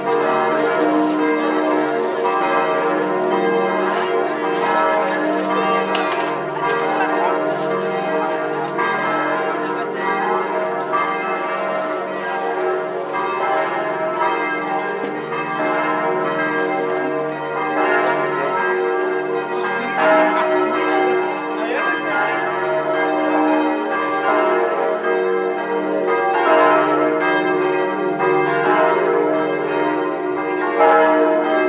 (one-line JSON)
{"title": "Marché, Bourgueil, France - Brocante bells", "date": "2014-08-03 11:33:00", "description": "During the brocante (second hand/antiques) market in Bourgueil the church started tolling this tune. At first I stood outside the church and then walked under the covered market place, where you can hear brief sounds of people's conversations.\nRecorded with ZOOM-H1, edited with Audacity's high-pass filter to reduce wind noise.", "latitude": "47.28", "longitude": "0.17", "altitude": "47", "timezone": "Europe/Paris"}